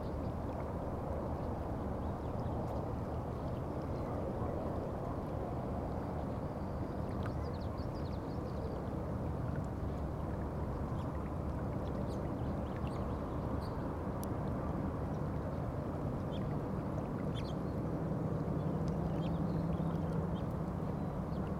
Rio Grande en el bosque accessed via Bachechi Open Space. Despite effort to escape traffic sound from Alameda and Coors Boulevard i.e. hiking further; location noisy. In addition to zero water flow on the east fork of the island at this time of the year, set-up difficult. Recorded on Tascam DR-100 mk II, levels adjusted on Audacity.
8 August, ~08:00